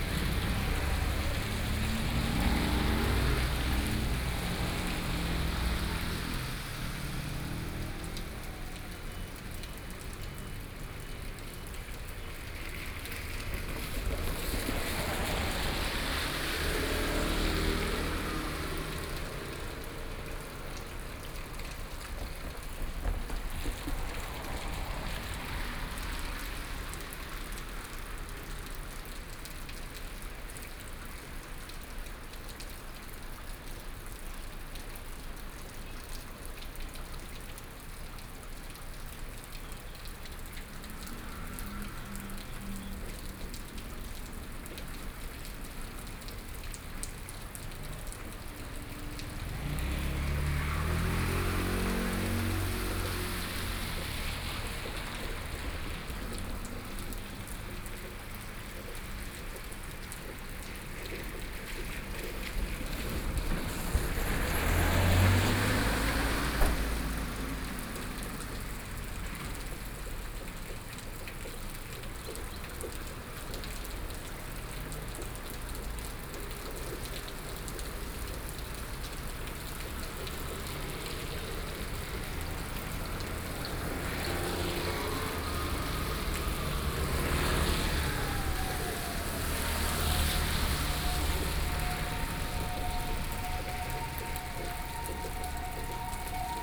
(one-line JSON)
{"title": "Nanchang St., Luodong Township - Rainy Day", "date": "2013-11-07 09:57:00", "description": "Standing in front of a railroad crossing, The traffic sounds, Train traveling through, Binaural recordings, Zoom H4n+ Soundman OKM II", "latitude": "24.67", "longitude": "121.77", "altitude": "8", "timezone": "Asia/Taipei"}